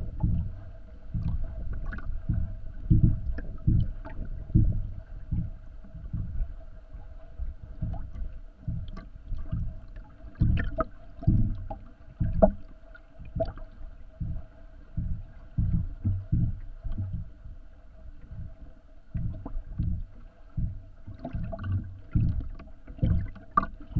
Lithuania, Mindunai, wooden footbridge
contact microphones placed between the planks of wooden footbridge